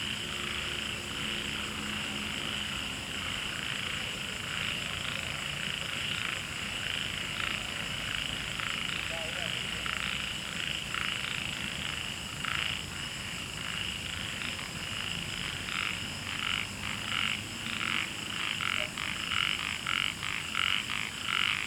Frogs chirping, Wetland
Zoom H2n MS+XY
Nantou County, Puli Township, 桃米巷11-3號, 11 August